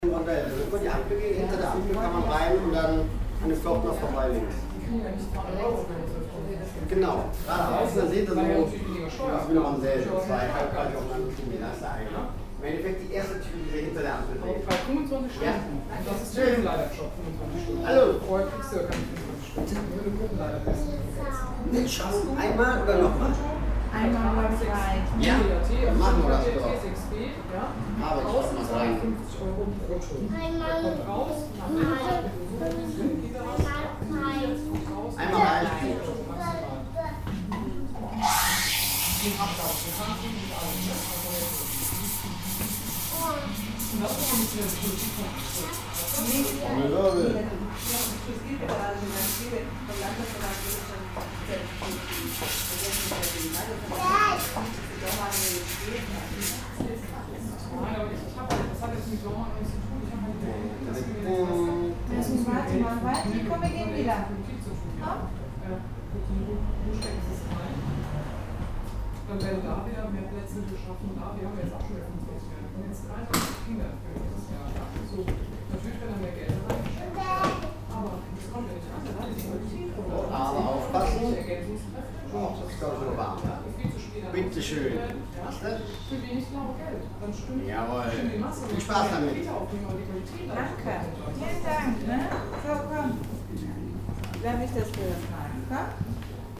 cologne, ubierring, cafe bude - cologne, ubierring, caffe bar 02
gespräche und kaffeemaschine am nachmittag
soundmap nrw
project: social ambiences/ listen to the people - in & outdoor nearfield recordings
south, ubierring, caffe bar, 15 June 2008, ~12:00